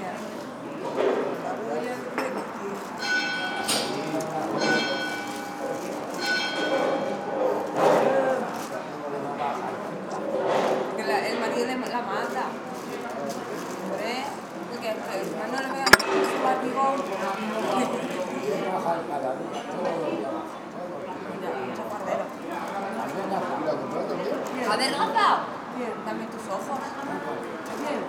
Puig de Sant Pere, Palma, Illes Balears, Spain - Cafe Arenas, Placa de La Drassana, Palma Mallorca.
Cafe Arenas, Placa de La Drassana, Palma Mallorca. Sont M10, built in mics.